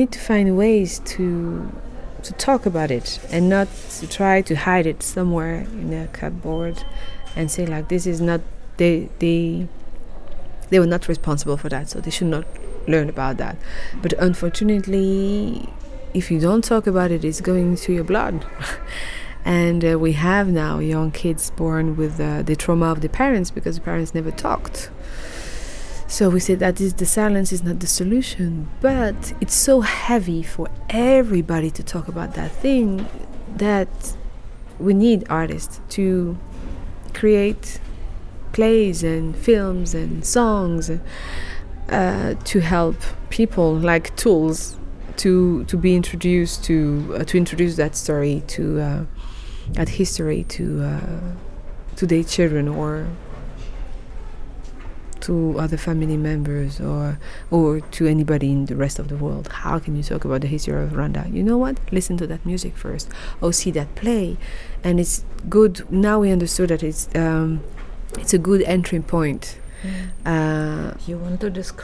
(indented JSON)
{
  "title": "City Library, Hamm, Germany - The heritage of genocide…",
  "date": "2014-06-16 15:44:00",
  "description": "… Carole continues telling us how artists, and especially women artists picked up the task of facing the heritage of genocide und of healing social trauma…",
  "latitude": "51.68",
  "longitude": "7.81",
  "altitude": "66",
  "timezone": "Europe/Berlin"
}